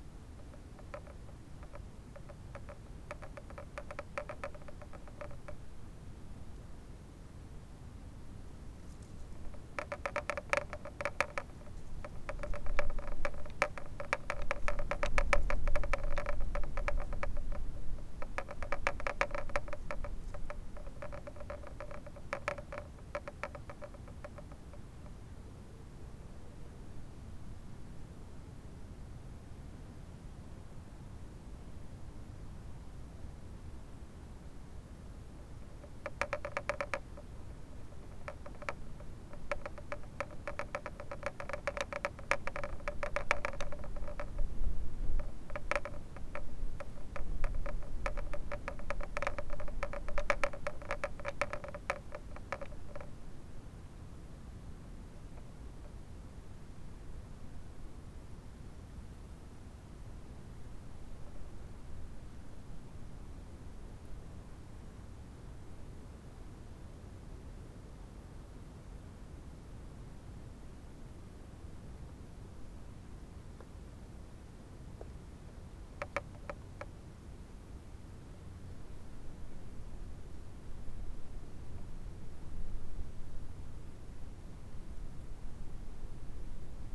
geesow: salveymühle - the city, the country & me: fence
stormy evening, fence rattling in the wind
the city, the country & me: january 3, 2014